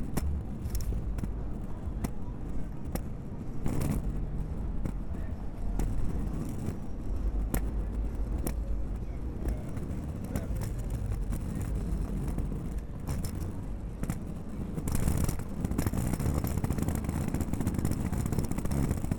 Elm St, Dallas, TX, USA - USA Luggage Bag Drag #11 (Night)
Recorded as part of the 'Put The Needle On The Record' project by Laurence Colbert in 2019.
Texas, United States, 2 October